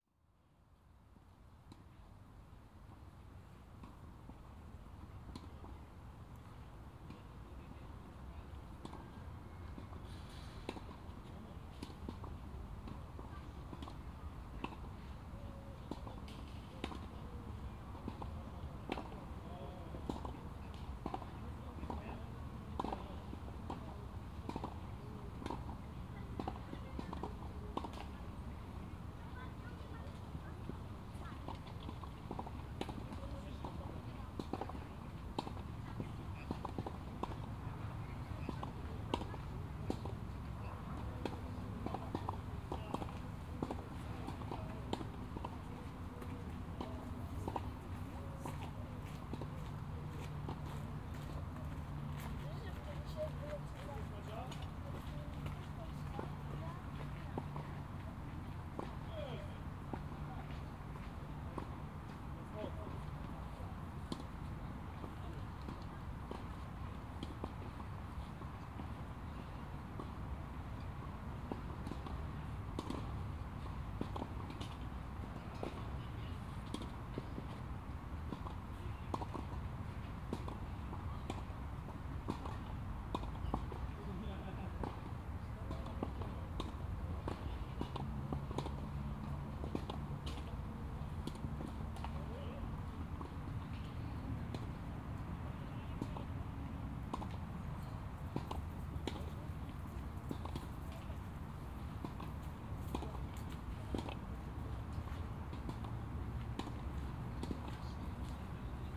Sound from tennis court - binaural recordind
Łódź, Poland, 1 August 2017